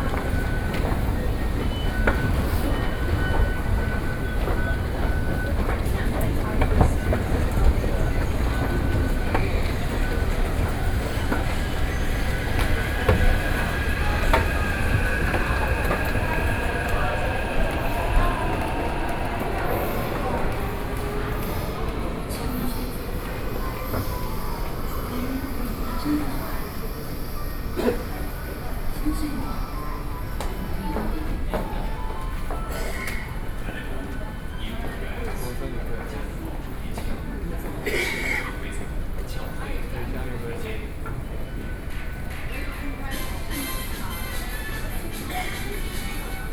Taipei Main Station, Taipei city, Taiwan - SoundWalk